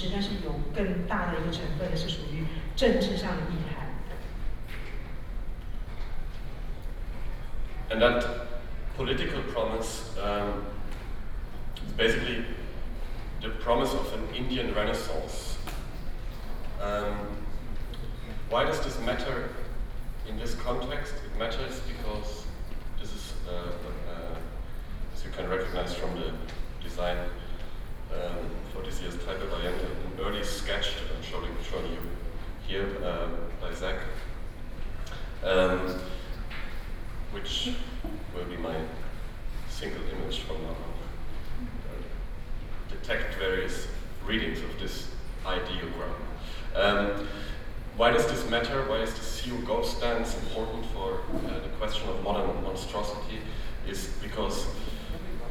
TAIPEI FINE ARTS MUSEUM - Speech
Curators are speech in the MUSEUM, Sony PCM D50 + Soundman OKM II, Best with Headphone( SoundMap20120929- 22)
台北市 (Taipei City), 中華民國, September 2012